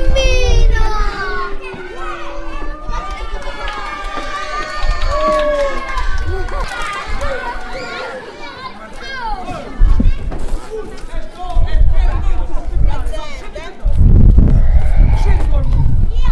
Taranto, città vecchia, costruzione park Urka di LABuat - Taranto voci dal ParkUrka LABuat